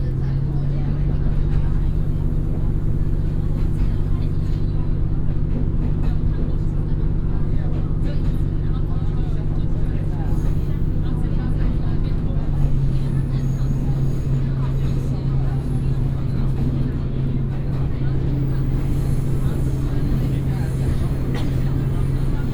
內灣線, Hengshan Township, Hsinchu County - In the train compartment
In the train compartment, tourist